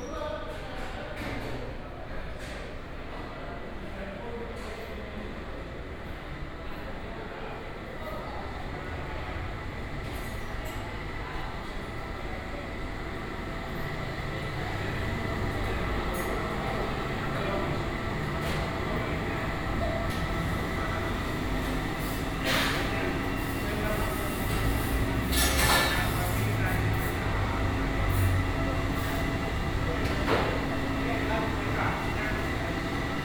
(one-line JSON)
{"title": "Athen, Central Station - station walk", "date": "2016-04-06 10:50:00", "description": "a short walk in Athens central station. This station has about the size of a local suburban train station, somehow odd for such a big city.\n(Sony PCM D50, OKM2)", "latitude": "37.99", "longitude": "23.72", "altitude": "60", "timezone": "Europe/Athens"}